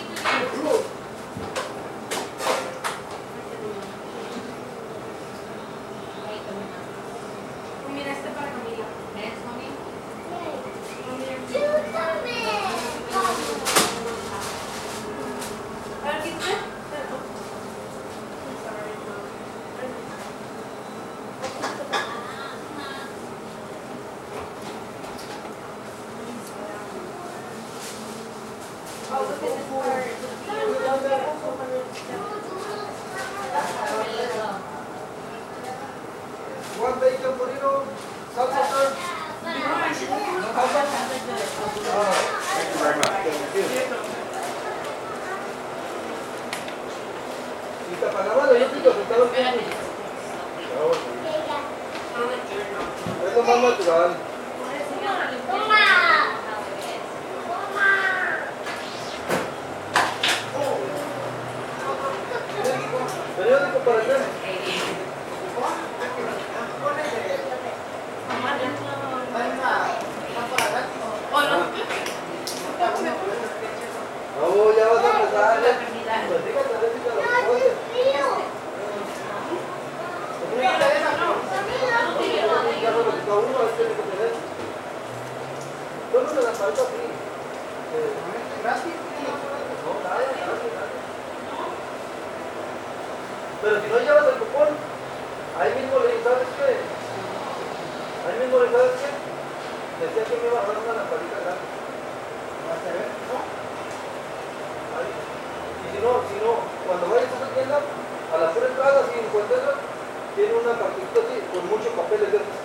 Using my Olympus LS-10 I captured the sounds from inside this small restaurant. I was on holiday and ended up eating here often because of their amazing Burrito's. Also the owners were very happy and friendly which made the place feel homely.
18 December, 14:00